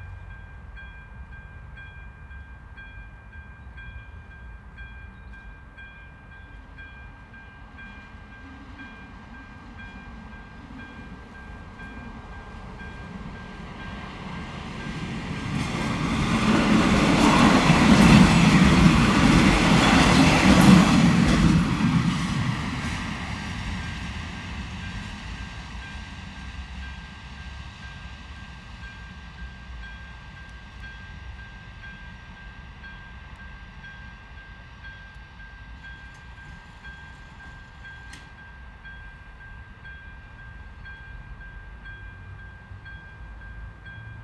Level crossing bells and train, Údolní, Praha, Czechia - Level crossing bell and 2 trains
Trains stop at Braník Station 4 times per hour - not so often. But on every occasion they are accompanied by the level crossing bell ringing when the barriers descend to stop the traffic. They stop ringing immediately after the train has passed. Traffic starts again.
6 April 2022, ~18:00